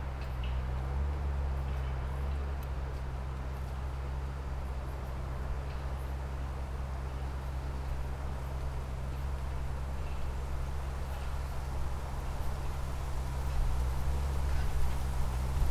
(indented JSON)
{
  "title": "Rheinfelden, Park in the northern city limits - forest works near the A98 construction site",
  "date": "2014-09-09 15:27:00",
  "description": "clearing works in the forest. tractor equipped with garbing module, moving cut-down trees on a trailer and pulling out tangled bushes. further in the distance sounds of a bigger machinery working on a patch of expressway - the A98. this part of the express way will cut off two villages (Minseln and Krasau) from each other and it's a point of concern for the inhabitants as they want to keep in direct contact. As far as I know there are talks about a tunnel but not much is being decided on.",
  "latitude": "47.58",
  "longitude": "7.79",
  "altitude": "356",
  "timezone": "Europe/Berlin"
}